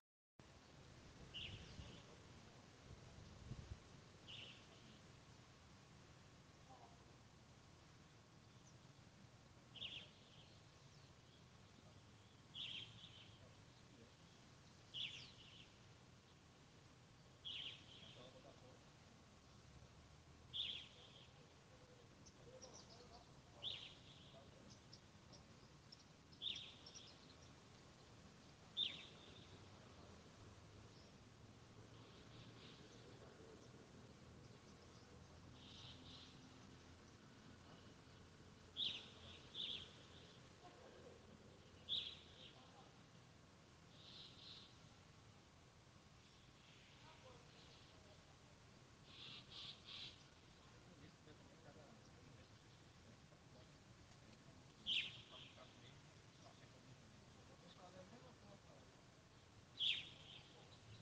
Tv. Primeira Brejinhos, Cruz das Almas - BA, 44380-000, Brasil - Frente ao Pavilhão CCAAB da UFRB
Captação realizada através do recurso de celular acoplado em lapela. Captação realizada em uma manhã ensolarada, período pandêmico, sem aulas regulares na UFRB.